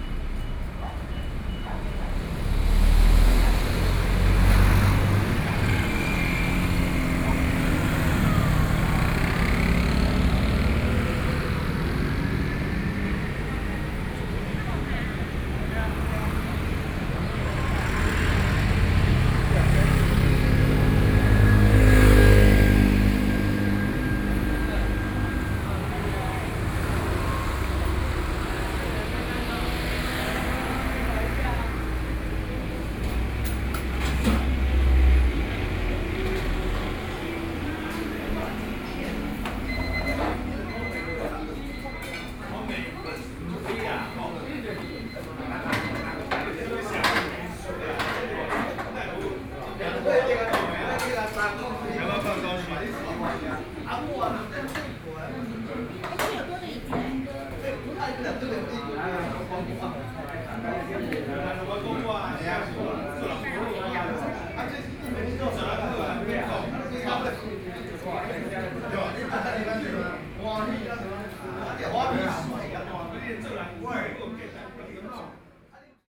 Jiahou Rd., Houli Dist. - On the road
walking on the road, Traffic Sound, Then enter the restaurant
Binaural recordings